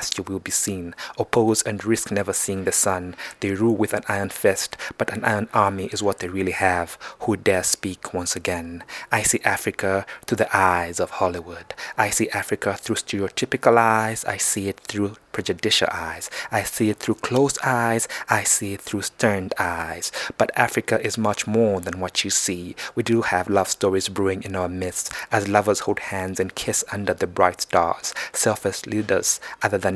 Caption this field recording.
We made these recordings standing outside the dorm of my backpackers lodge, only a day before my departure from Lusaka back to London. We had recorded some poems with Peter earlier at Mulungushi and I had asked him to also record this poem, the first one I heard him recite at one of Bittersweet Poetry’s Open Mic sessions: “I see Africa through Hollywood’s eyes”…